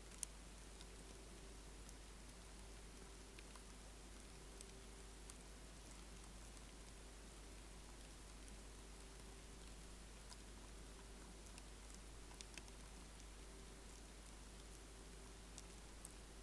Our living room, Katesgrove, Reading, UK - silkworms in the living room
I have been raising silkworms to better understand the provenance of silk textiles: this is in preparation for a Sonic Trail I am producing for TATE Modern, which will accompany an exhibit by Richard Tuttle, made of silk, viscose and modal.
I have about 100 silkworms which I ordered online a fortnight ago. They love the leaves from our Mulberry tree and are growing well on a diet of them, washed and freshly picked. I have been experimenting with the best ways of recording the sounds of these silkworms; the main sounds are of their tiny claspers (feet) moving on the coarse leaves, and of their tiny jaws chowing down.
You would not believe how many leaves these little comrades can eat! This recording experiment was done at midnight by switching off all the buzzy electronics in the room and lowering my sound professional binaural microphones into the silkworms' container so they hung right beside the worms.